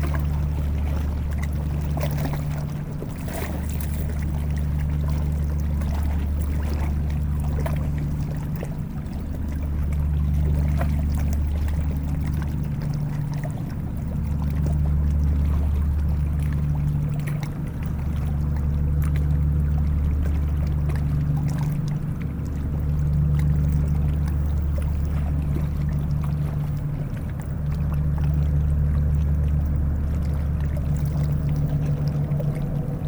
{"title": "Notre-Dame-de-Bliquetuit, France - Boat", "date": "2016-09-17 08:00:00", "description": "A boat is passing by on the Seine river, it's an industrial boat, the Duncan.", "latitude": "49.51", "longitude": "0.76", "timezone": "Europe/Paris"}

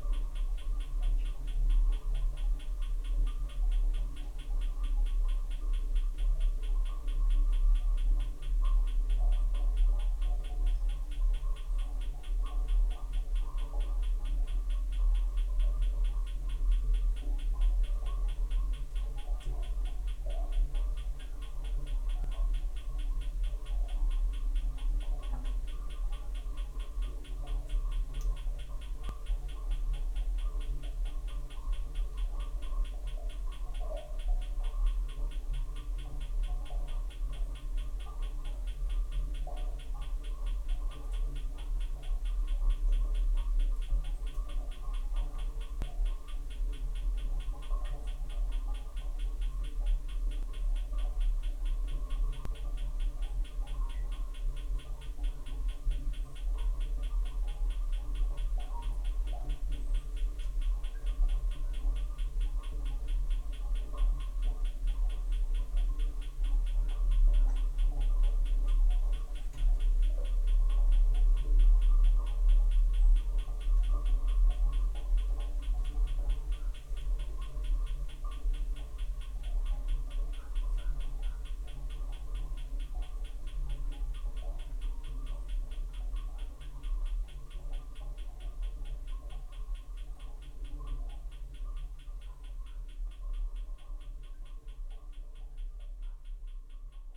{"title": "Lukniai, Lithuania, in a well", "date": "2016-03-02 13:10:00", "description": "some old well found in a meadow. actually very silent sound, I've normalized it", "latitude": "55.56", "longitude": "25.57", "altitude": "104", "timezone": "Europe/Vilnius"}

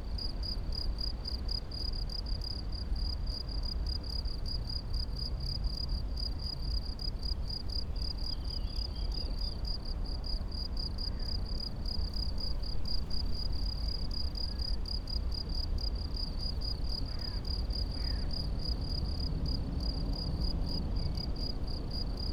{"title": "path of seasons, meadow, piramida - april winds through grass, crickets, trains, crows ...", "date": "2014-04-14 15:02:00", "description": "while lying in the grass", "latitude": "46.57", "longitude": "15.65", "altitude": "363", "timezone": "Europe/Ljubljana"}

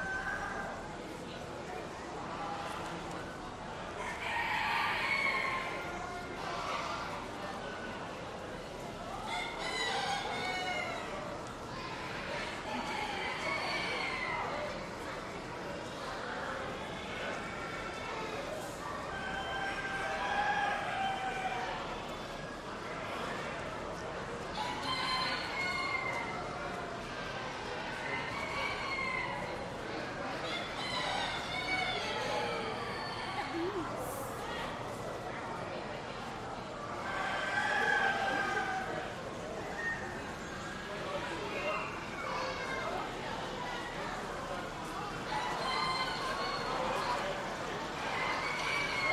{"title": "Kansas State Fairgrounds, E 20th Ave, Hutchinson, KS, USA - Northeast Corner, Poultry Building", "date": "2017-09-09 16:08:00", "description": "A man and children admire fantail and frillback pigeons. Other poultry are heard in the background. Stereo mics (Audiotalaia-Primo ECM 172), recorded via Olympus LS-10.", "latitude": "38.08", "longitude": "-97.93", "altitude": "469", "timezone": "America/Chicago"}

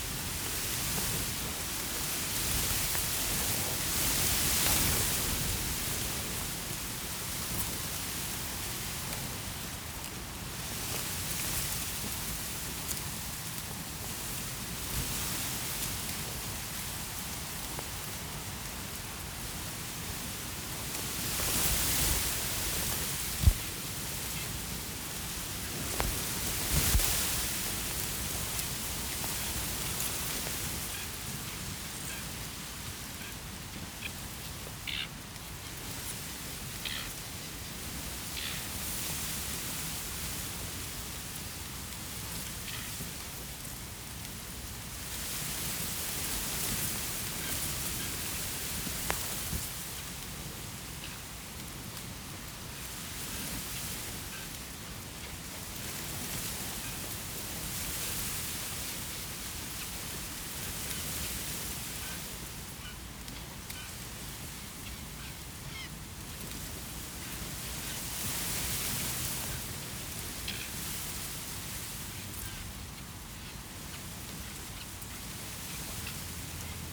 {"title": "Wind in summer reeds beside the water filled quarry pit, New Romney, UK - Wind in summer reeds beside the water filled quarry pit", "date": "2021-07-26 18:23:00", "description": "In the late July the reeds are green and their sound in wind has a lovely softness. As they dry through the autumn and winter it becomes more brittle and hard. The occasional churring in this recording is probably a reed warbler. 26/07/2021", "latitude": "50.96", "longitude": "0.96", "altitude": "1", "timezone": "Europe/London"}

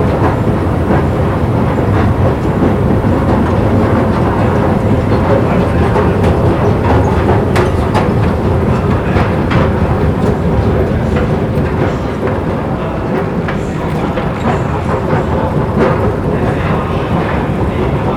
{"title": "Allées Jean Jaurès, Toulouse, France - escalator noise", "date": "2021-04-16 10:00:00", "description": "escalator noise, métal noise, footstep, voices\ncaptation : Zoom H4n", "latitude": "43.61", "longitude": "1.45", "altitude": "148", "timezone": "Europe/Paris"}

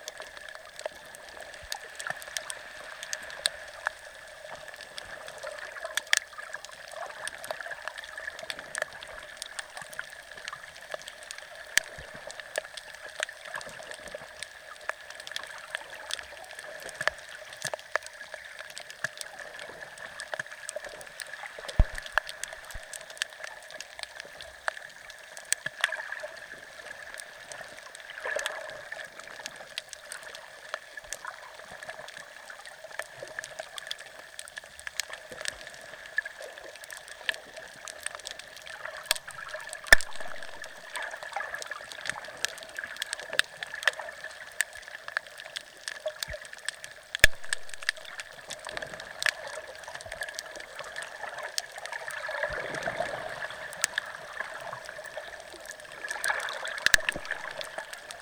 {"title": "Bundeena, NSW, Australia - (Spring) Inside Bundeena Bay At Midday", "date": "2014-09-24 13:00:00", "description": "I'm not sure what all the sound sources are. There were lots of little fish around the microphone so I'm assuming they were one of the vocalists.\nTwo JrF hydrophones (d-series) into a Tascam DR-680.", "latitude": "-34.08", "longitude": "151.15", "timezone": "Australia/Sydney"}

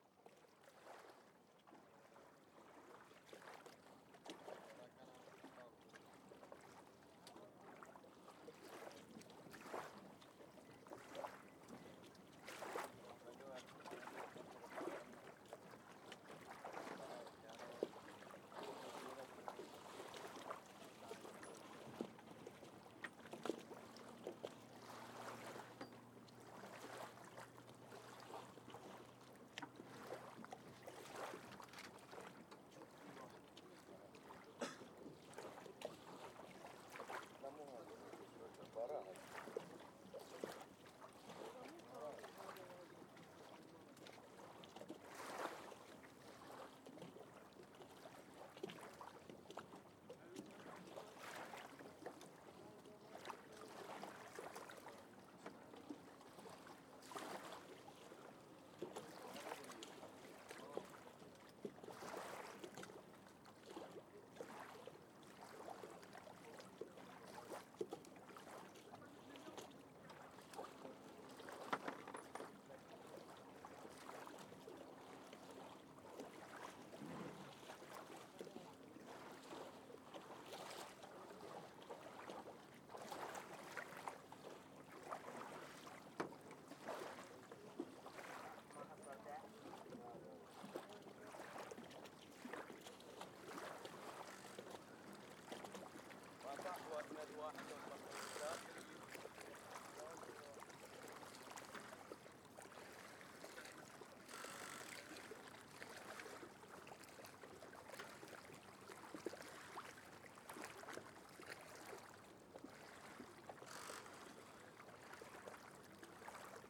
{"title": "Askar, Bahreïn - Port de pêche - Askar - Bahrain", "date": "2021-05-29 19:00:00", "description": "Askar - Barhain - ambiance du soir - port de pêche", "latitude": "26.06", "longitude": "50.62", "altitude": "1", "timezone": "Asia/Bahrain"}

